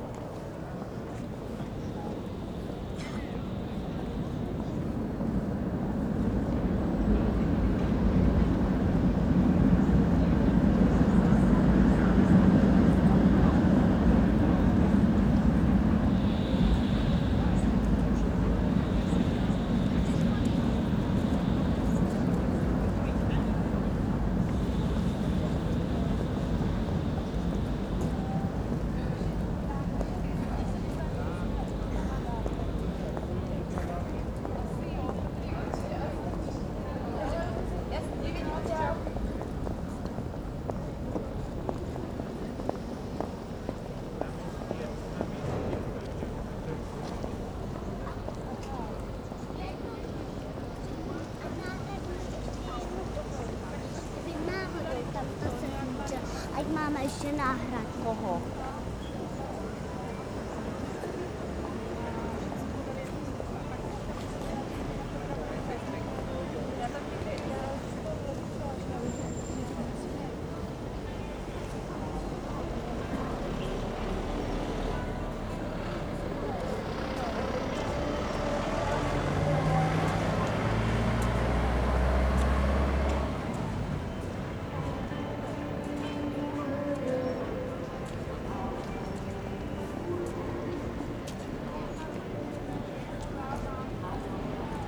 nám. Svobody, Brno-střed, Česko - Freedom Square (Náměstí Svobody)
Recorded on Zoom H4n + Rode NTG 1, 26.10.
26 October, 10:00